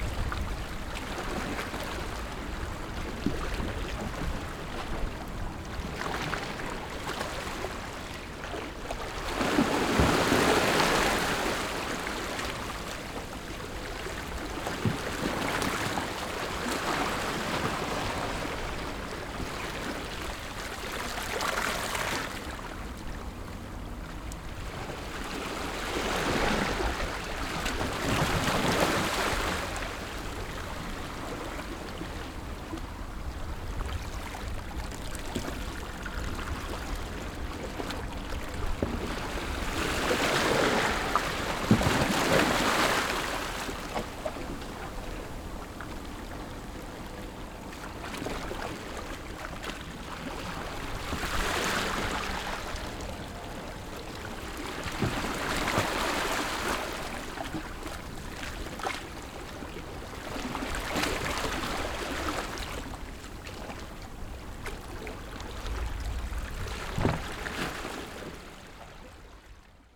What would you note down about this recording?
Sound of the waves, Very hot weather, Small port, Pat tide dock, Zoom H6 XY +Rode NT4